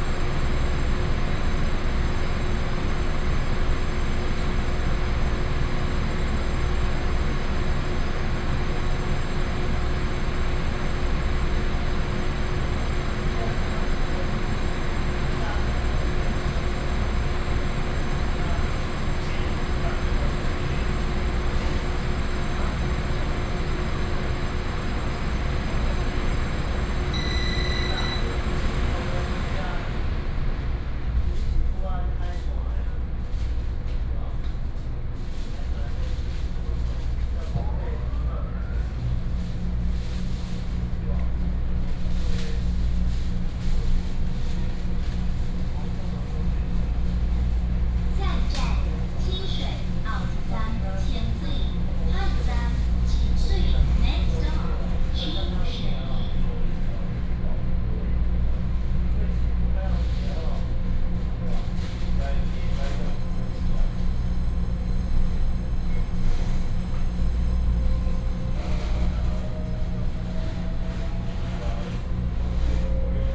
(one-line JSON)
{"title": "沙鹿區鹿峰里, Shalu Dist., Taichung City - In the train compartment", "date": "2017-01-19 10:33:00", "description": "In the train compartment, From Shalu Station to Qingshui Station", "latitude": "24.25", "longitude": "120.56", "altitude": "4", "timezone": "Asia/Taipei"}